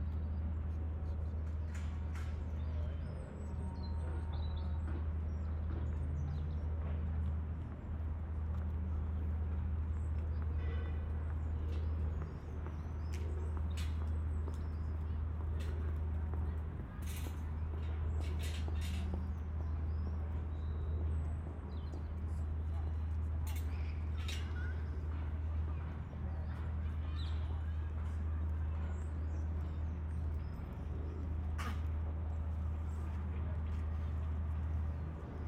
London, UK, Vauxhall Park - Binaural Recording, Vauxhall Park.
Recorded at 17:30, Binaural Recording into a Zoom H4n, a sunny-ish day, the beginning of spring. Unedited as i wanted to capture the sound as is without extra processing/editing. I walk through the park everyday to work and think there is a good collage of sounds within and around the park.
9 March 2017, 17:30